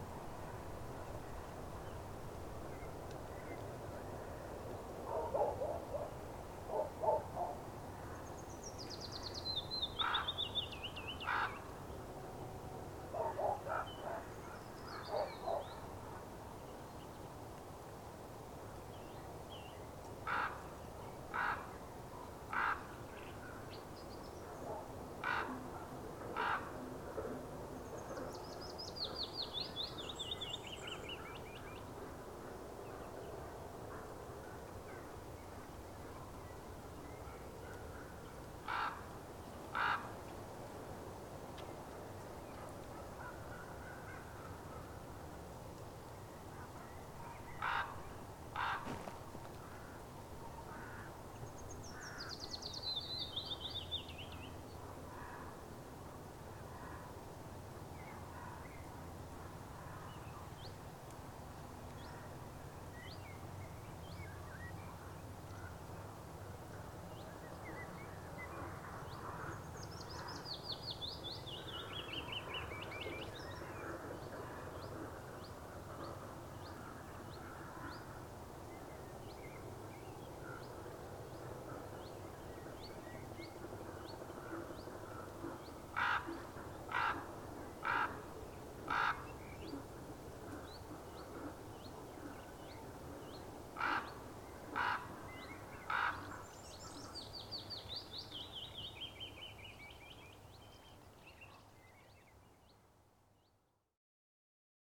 Dičiūnai, Lithuania, at sand quarry
Abandoned sand quarry. Soundscape.